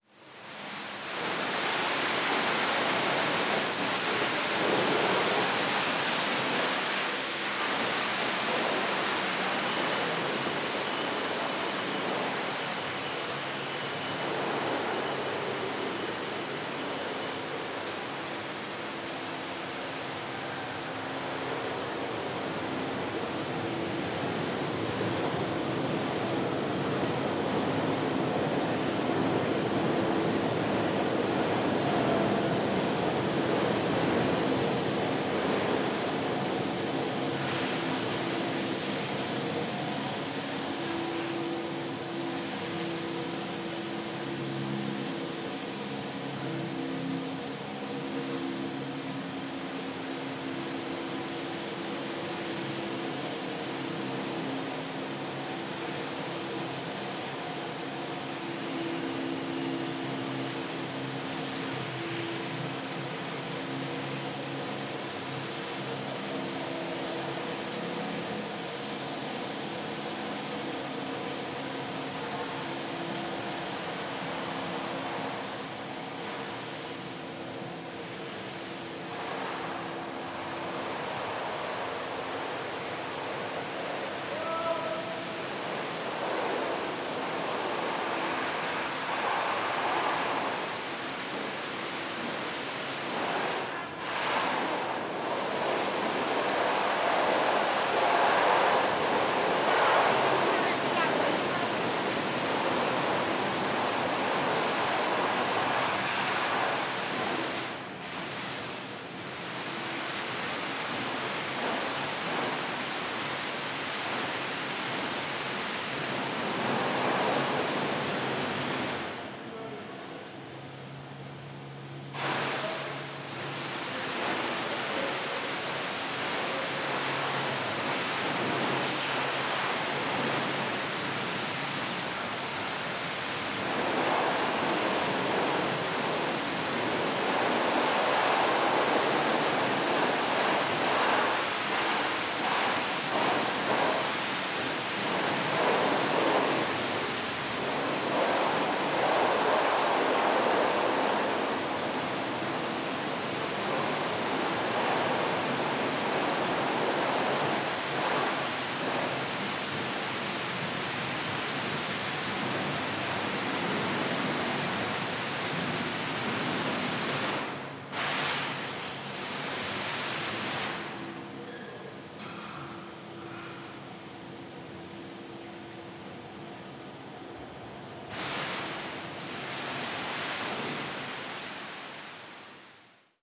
Jay St, Brooklyn, NY, USA - MTA Cleaning Crew
MTA cleaning crew washing the floors and walls of Jay St - Metro Tech station.
30 March 2022, 23:50